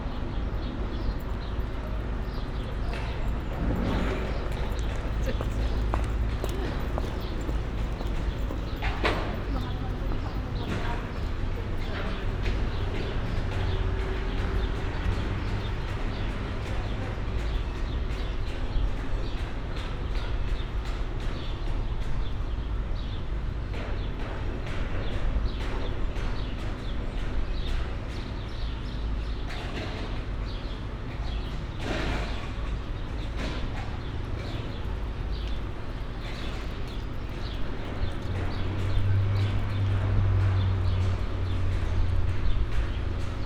berlin: liberdastraße - the city, the country & me: construction site for a new supermarket
construction works
the city, the country & me: may 29, 2012